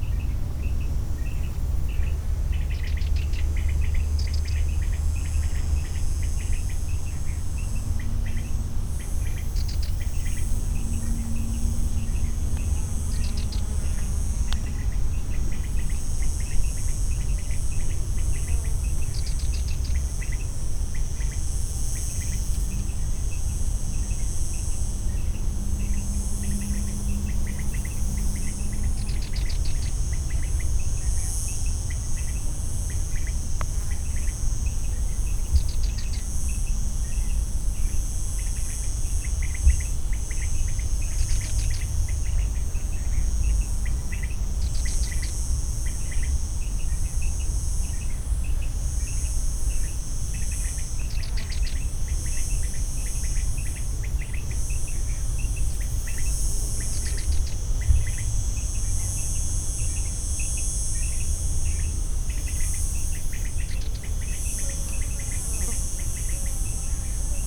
Triq Tad-Dahar, Il-Mellieħa, Malta - birds in bushes
unknown birds chirping in the bushes, insects buzzing along (roland r-07)